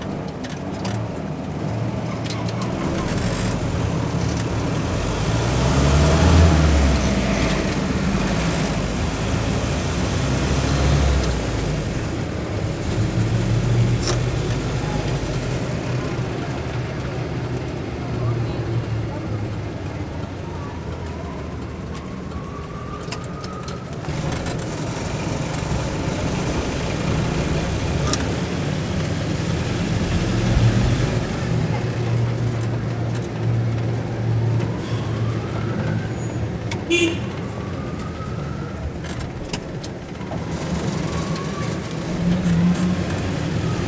Santiago de Cuba, calle Enramada, riding in a Jeep
riding in a Jeep, driving down calle Enramada, one of the main shopping streets in Santiago
6 December 2003, ~17:00